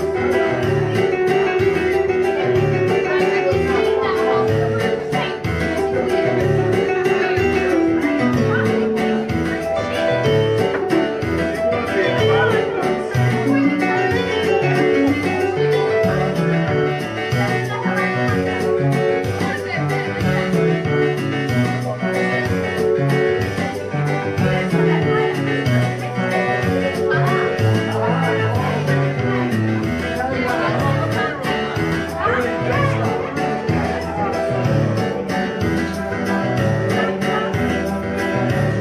London, Holloway Road, pub, karaoke, recorded with Nokia E72
Greater London, UK, 2 June 2011